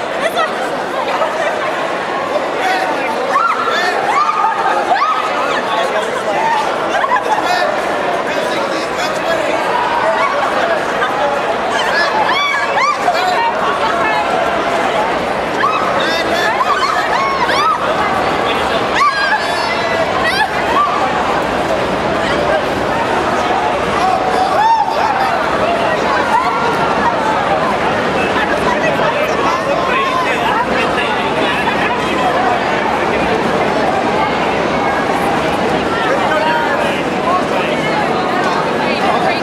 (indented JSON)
{
  "title": "Dumbo, Brooklyn, NY, USA - Come Out and Play-Night Games 2014",
  "date": "2014-07-18 21:10:00",
  "description": "recorded in Dumbo, literally Down Under the Manhattan Bridge Overpass, at Come Out and Play's 2014 Night Games. This was the assembly place for most of the games. Also, cars and occasional subway train pass overhead.",
  "latitude": "40.70",
  "longitude": "-73.99",
  "altitude": "23",
  "timezone": "America/New_York"
}